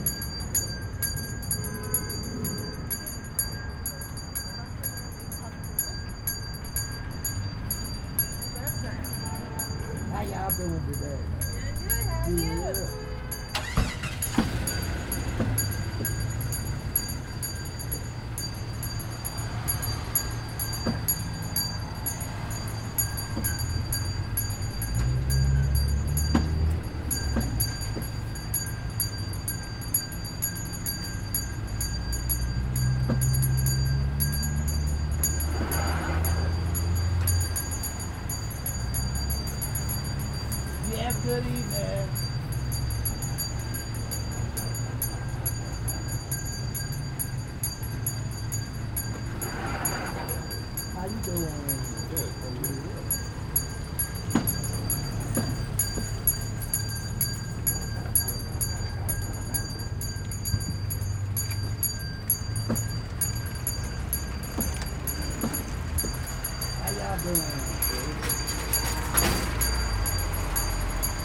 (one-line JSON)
{"title": "Clifton, Louisville, KY, USA - Ringing (Michael)", "date": "2013-11-19 16:00:00", "description": "A man (Michael) ringing a bell and talking to people at the entrance of a supermarket amid shopping carts and cars.\nRecorded on a Zoom H4n.", "latitude": "38.26", "longitude": "-85.70", "altitude": "142", "timezone": "America/Kentucky/Louisville"}